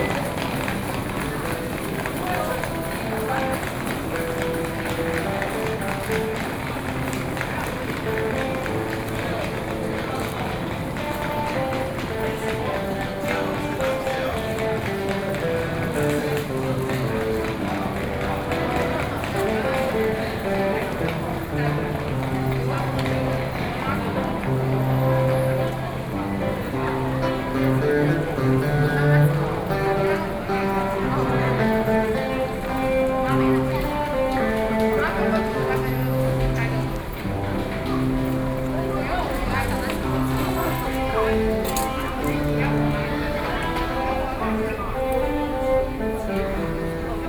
Zuoying, Kaohsiung - soundwalk
From the MRT station to the High Speed Rail Station, Sony PCM D50 + Soundman OKM II